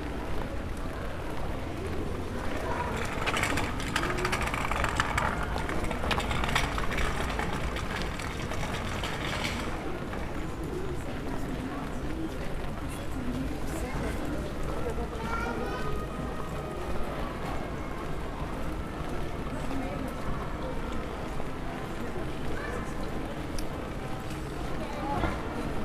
L'ambiance de la Rue Croix d'Or à Chambéry un samedi après midi, fanfare Place St Léger.